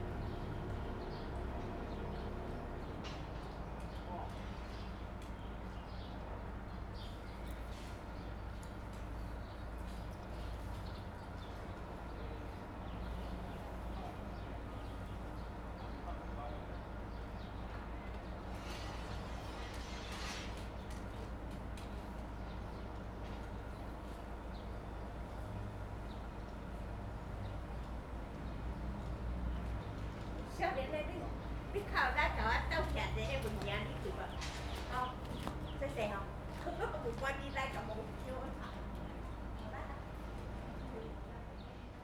保護廟, Jinhu Township - In the temple
In the temple, Birds singing, Construction Sound
Zoom H2n MS +XY
3 November, 10:13